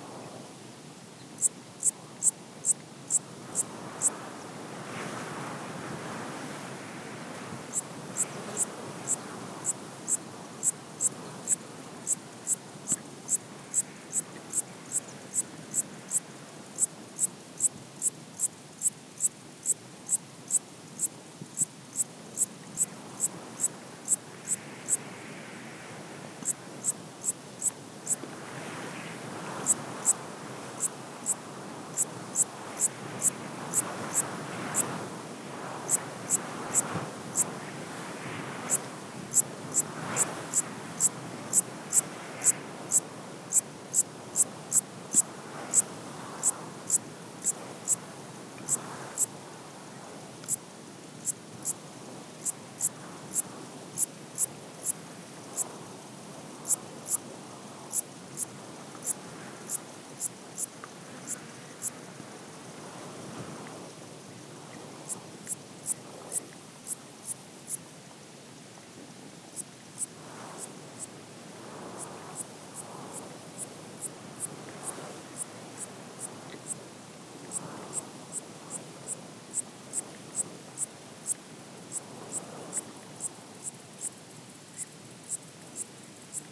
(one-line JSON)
{"title": "Kotayk, Arménie - Wind in the tall grass", "date": "2018-09-05 11:00:00", "description": "Into a volcanoes mountains landscape, wind is powerfully blowing into the tall grass. A small locust is singing.", "latitude": "40.32", "longitude": "44.91", "altitude": "2982", "timezone": "Asia/Yerevan"}